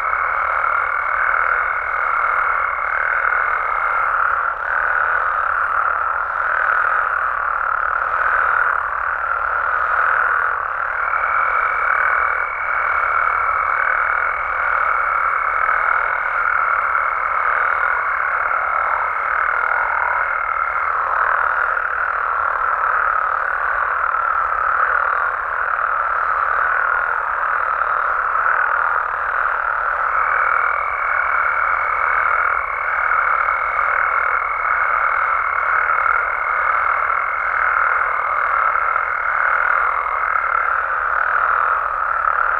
During the night close to a pound in the small community of Lavaderos (Desert of San Luis Potosi, Mexico), some toads and frogs are singing, happy to had some rain during the day.... after a few months very dry.
Recorded by a AB setup with 2 B&K 4006 Microphones
On a Sound Devices 633 recorder
Sound Ref MXF190620T15
GPS 23.592193 -101.114010
Recorded during the project "Desert's Light" by Félix Blume & Pierre Costard in June 2019